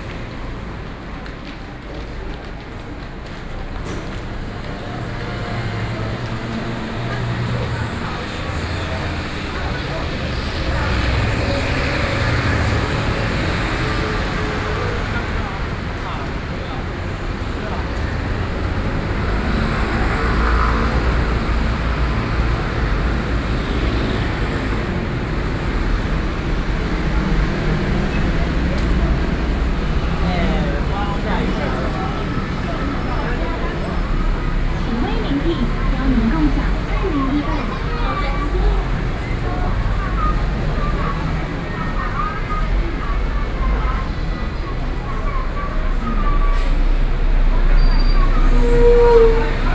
Yonghe District, New Taipei City - SoundWalk